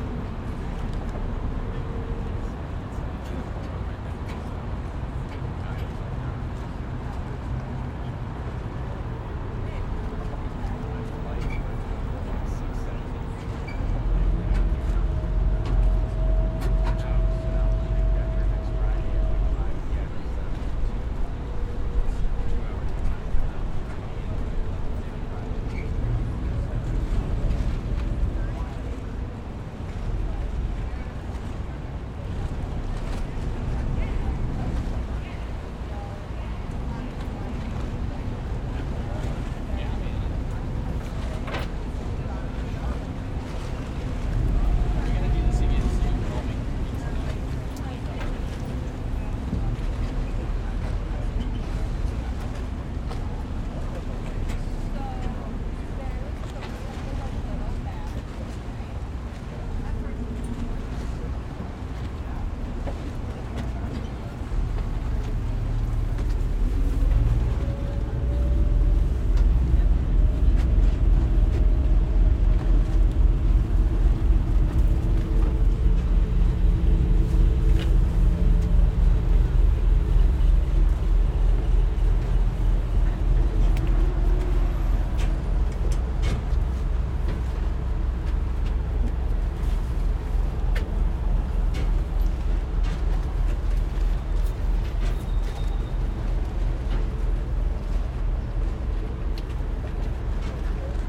Sounds of the Rockaway Ferry docking at Wall Street/Pier 11.